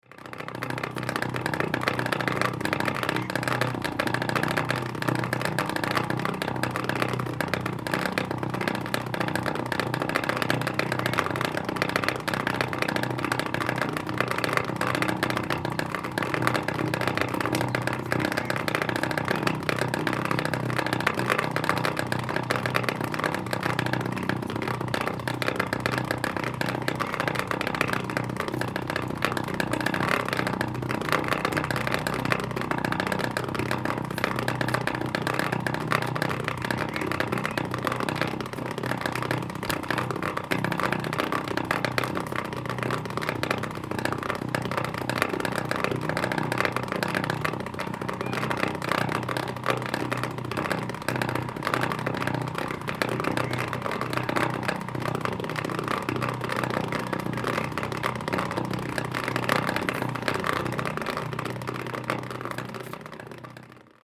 Paper Dome, Nantou County - Water pipes
sound of the Flow, Water pipes
Zoom H2n MS+XY